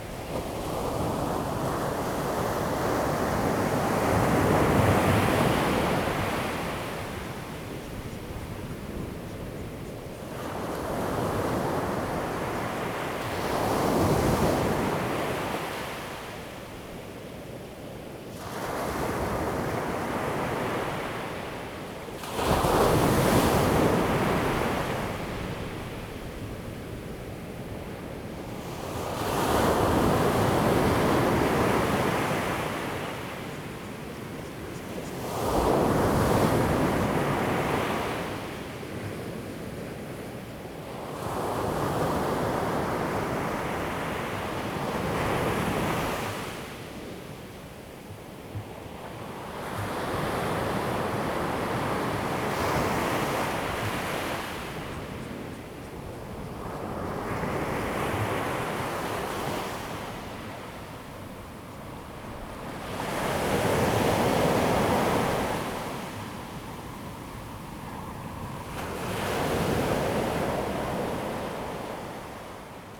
Chenggong Township, Taiwan - Sound of the waves
Sound of the waves, In the beach, Very hot weather
Zoom H2n MS+ XY
Taitung County, Taiwan